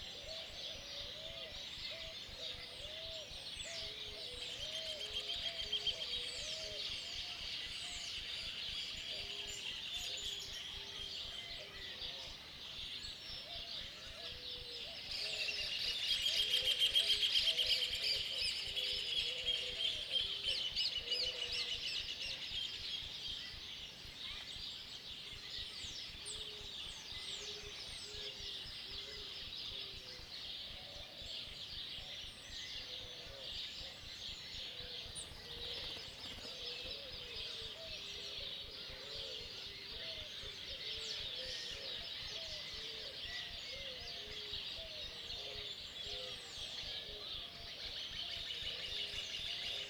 LM Coronel Segui, Provincia de Buenos Aires, Argentina - Very early in the morning, only sounds, no traffic much less humans
October 12th beautiful morning, dawn and birds. Mud birds. Lambs. No traffic. Only Sounds
12 October 2021